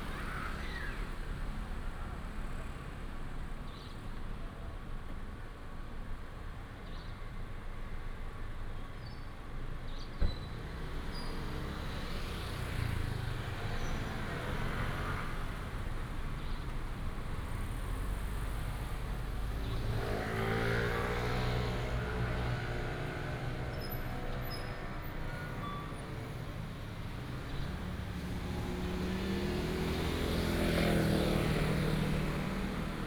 Wenhua S. Rd., Puzi City, Chiayi County - At the intersection
At the intersection, Convenience store, Bird call, Traffic sound
Binaural recordings, Sony PCM D100+ Soundman OKM II